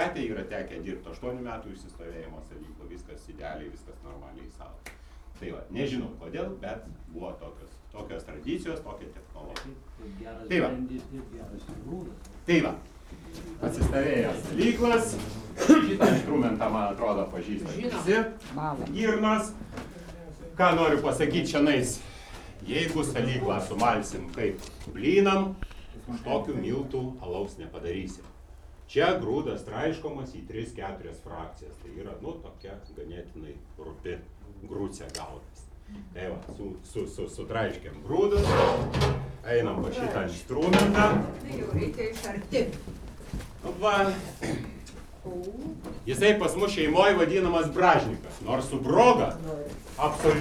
Lithuania, Dusetos, talk about beer making
Brewer R. Cizas speaks about bear making process